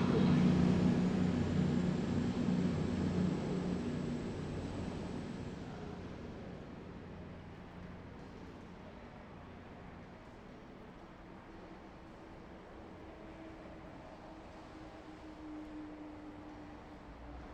waiting at Bmouth train station